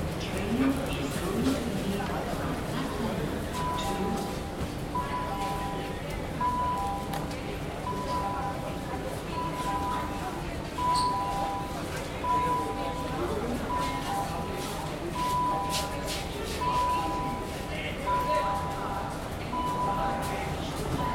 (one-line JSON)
{"title": "Rajiv Chowk, Connaught Place, New Delhi, India - (-34) Rajiv Chowk Metro Station", "date": "2016-02-06 14:17:00", "description": "Rajiv Chowk Metro Station; platform atmosphere\nsound posted by Katarzyna Trzeciak", "latitude": "28.63", "longitude": "77.22", "altitude": "215", "timezone": "Asia/Kolkata"}